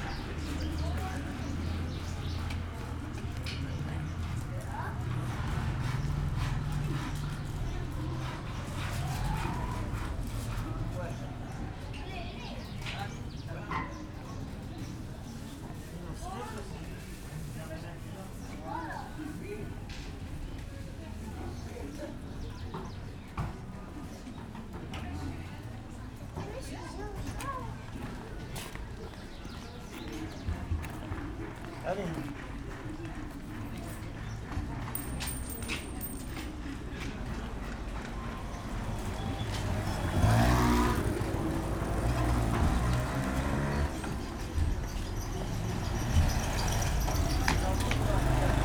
R.Sidi Abdelaziz, Marrakesch, Marokko - street ambience
sitting at a corner in Rue Sidi Abdelaziz, listening to the street activity
(Sony D50, DPA4060)